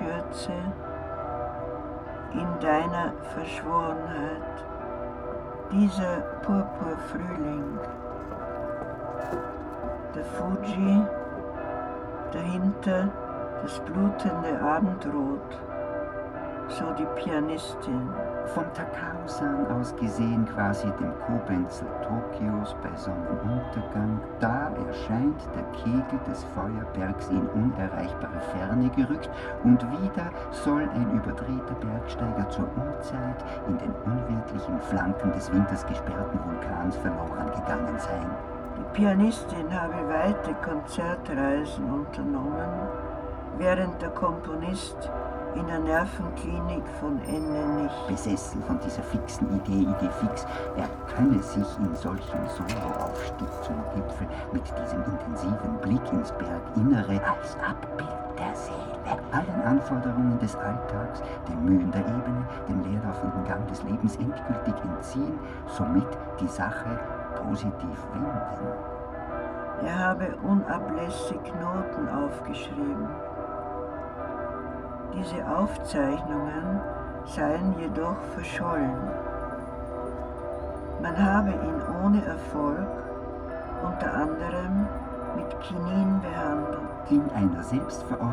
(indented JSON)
{
  "title": "Berlin, Schlossplatz - sound installation, church bells",
  "date": "2010-09-04 15:05:00",
  "description": "sound installation at schlossplatz, wedding bells of nearby Berliner Dom. area of former Palast der Republik, location of the planned city palace. now here is nice grass and wooden catwalks, lots of space and great sights",
  "latitude": "52.52",
  "longitude": "13.40",
  "altitude": "44",
  "timezone": "Europe/Berlin"
}